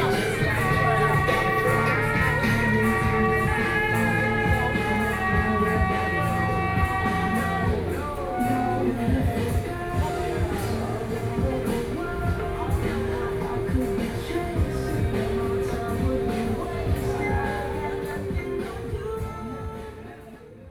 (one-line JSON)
{"title": "108台灣台北市萬華區青山里 - Traditional temple festivals", "date": "2012-12-04 14:59:00", "latitude": "25.04", "longitude": "121.50", "altitude": "16", "timezone": "Asia/Taipei"}